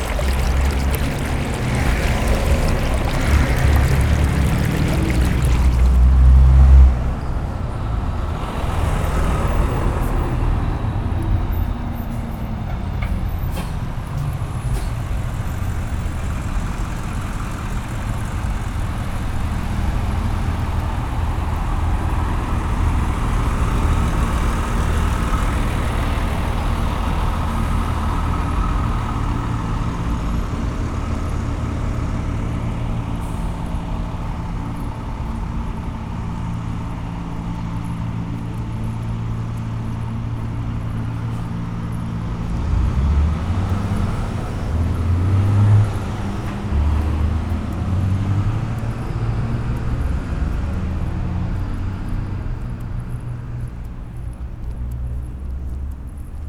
Montreal: Queen Mary & Victoria - Queen Mary & Victoria
equipment used: M-Audio MicroTrack II
exploring stream on chemin queen mary